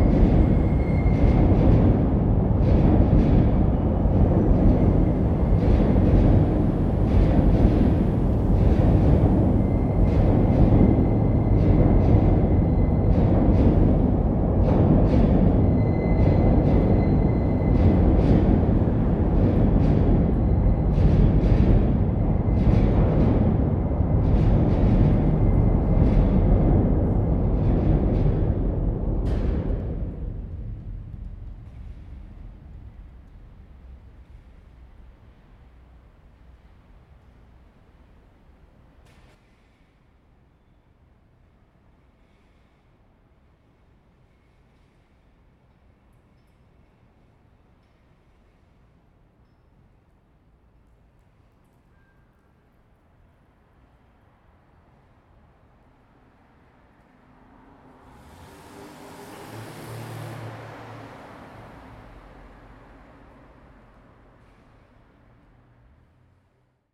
Freight Train From Under the Bridge - 30 Peter Hughes Dr, Fremantle WA 6160, Australia - Freight Train Under The Bridge
This is a fairly amateur recording, but it is one Ive been trying to get for a couple of weeks now.
The sound under the bridge when a freight train comes past is quite other-worldly, and very different from the passenger train. I have tried to capture this many times, but the timing is awkward as I need to cycle quickly from work to catch this particular freight train. Sometimes I'm too late, sometimes I'm early and I leave before its arrived.
What I love about it is how quickly the sound attacks after a very feint and relatively long build-up. The locomotive is quite loud, and the following carriages are relatively quieter. However, the sound just vanishes as quickly as it attacks when it leaves the bridge and leaves you in a relative silence.
The bridge underneath is an interesting shape - I will take a photo of it and add it to this description.
zoom h2n surround mode. zoom windjammer. ATH-Mx40 headphones.
30 November, 18:15